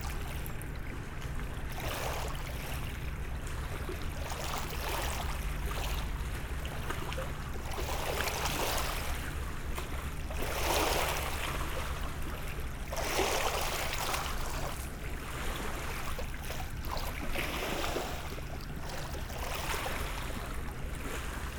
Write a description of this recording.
The Amalegro tourist boat is passing by on the Seine river.